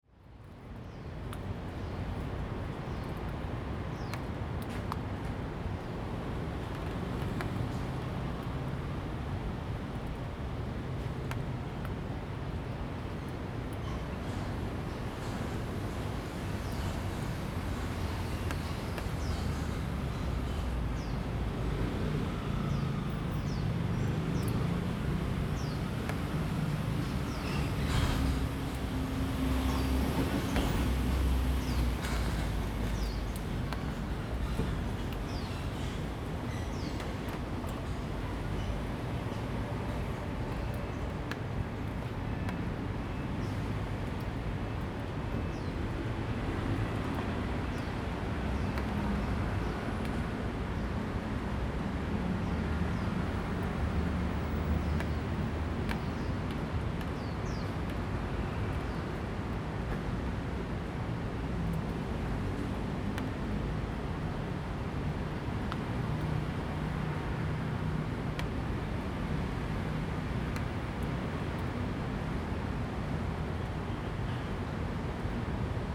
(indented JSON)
{
  "title": "Hean Park, Da’an Dist., Taipei City - Raindrop",
  "date": "2015-07-30 16:31:00",
  "description": "In the woods, Raindrop, After the thunderstorm, Bird calls, Traffic Sound\nZoom H2n MS+XY",
  "latitude": "25.03",
  "longitude": "121.54",
  "altitude": "17",
  "timezone": "Asia/Taipei"
}